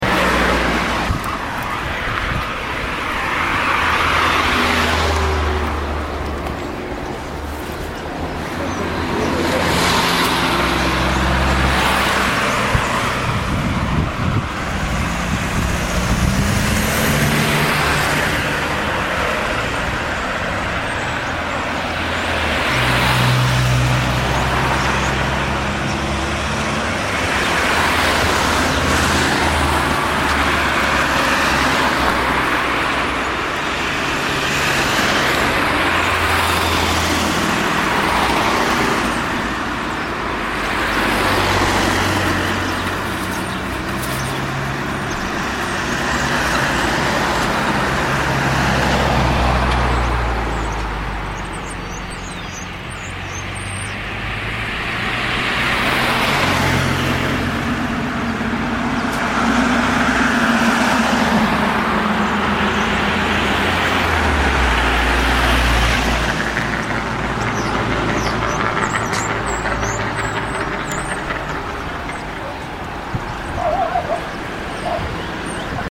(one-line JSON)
{"title": "via S. Maria, Parabiago, traffico in via S. Maria", "date": "2007-09-15 16:16:00", "description": "traffico in via S. Maria (settembre 2007)", "latitude": "45.56", "longitude": "8.95", "altitude": "182", "timezone": "Europe/Rome"}